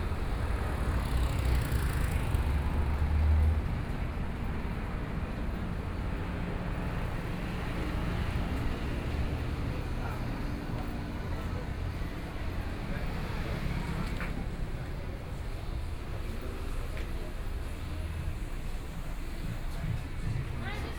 Yuzhu 3rd St., Xinxing Dist. - Shopping district
walking in the Shopping district, Traffic Sound
Kaohsiung City, Taiwan, 15 May 2014, 19:54